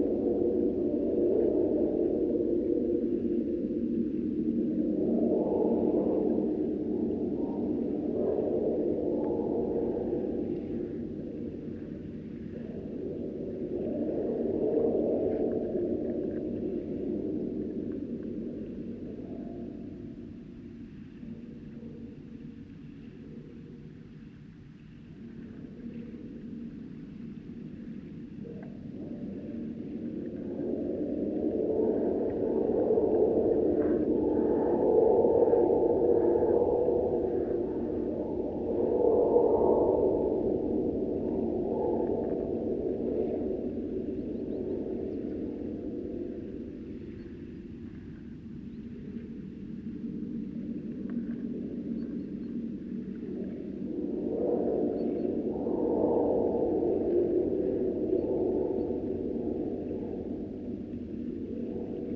metal wire fence in Nida Lithuania
Lithuania